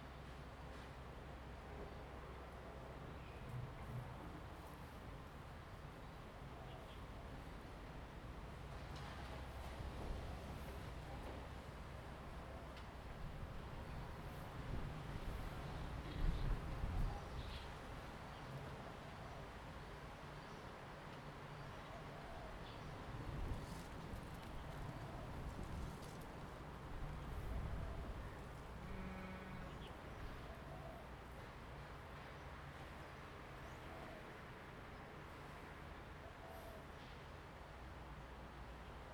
{"title": "瓊林聚落, Jinhu Township - Old building settlements", "date": "2014-11-03 10:27:00", "description": "Birds singing, Traffic Sound, Old building settlements\nZoom H2n MS+XY", "latitude": "24.45", "longitude": "118.37", "altitude": "12", "timezone": "Asia/Taipei"}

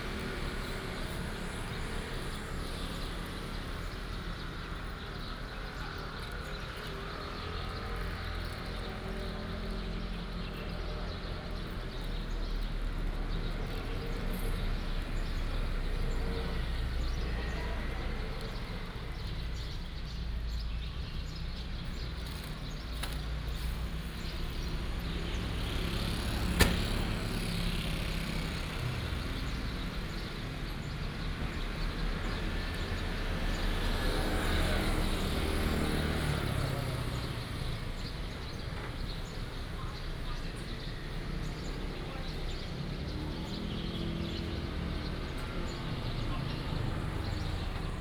{"title": "Guangwen Rd., Ershui Township 彰化縣 - Square outside the station", "date": "2018-02-15 09:08:00", "description": "Square outside the station, lunar New Year, Traffic sound, Bird sounds\nBinaural recordings, Sony PCM D100+ Soundman OKM II", "latitude": "23.81", "longitude": "120.62", "altitude": "86", "timezone": "Asia/Taipei"}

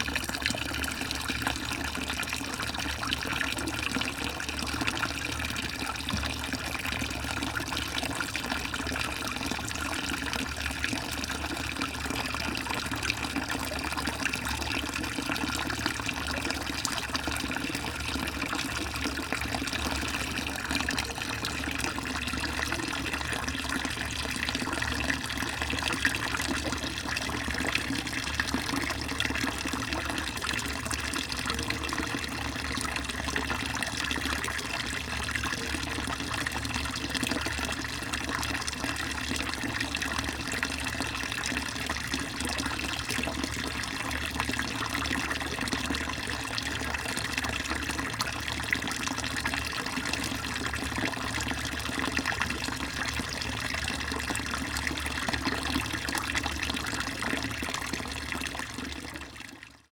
{"title": "Pyramide du Louvre Paris Fuite", "date": "2010-05-18 15:27:00", "description": "Pyramide du Louvre\nGrand bassin (en réparation)\nFuite dans le carrelage", "latitude": "48.86", "longitude": "2.34", "altitude": "44", "timezone": "Europe/Paris"}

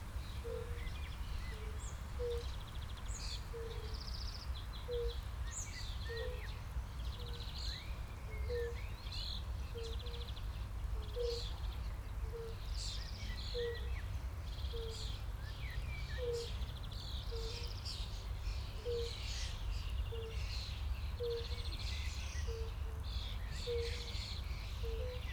{"title": "ROD Bażant, Aleja Spacerowa, Siemianowice Śląskie - Fire-bellied toads", "date": "2019-05-21 14:20:00", "description": "pond, nature reserve, calls of some Fire-bellied toads, distant traffic, aircraft\n(Sony PCM D50, DPA4060)", "latitude": "50.31", "longitude": "19.03", "altitude": "269", "timezone": "Europe/Warsaw"}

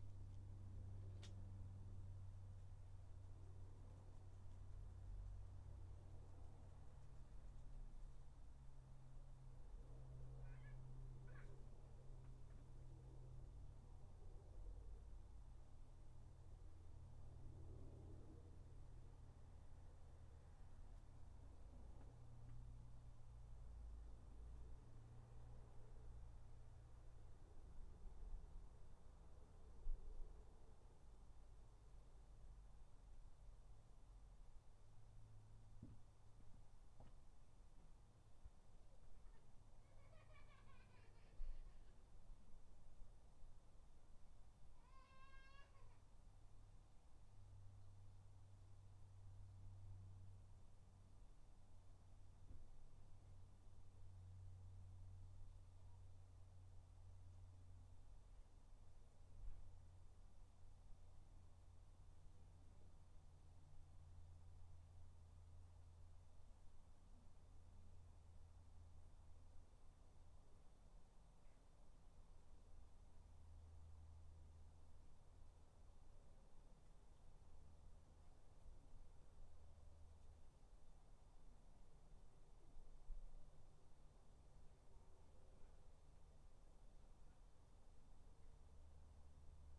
{"title": "Dorridge, West Midlands, UK - Garden 14", "date": "2013-08-13 16:00:00", "description": "3 minute recording of my back garden recorded on a Yamaha Pocketrak", "latitude": "52.38", "longitude": "-1.76", "altitude": "129", "timezone": "Europe/London"}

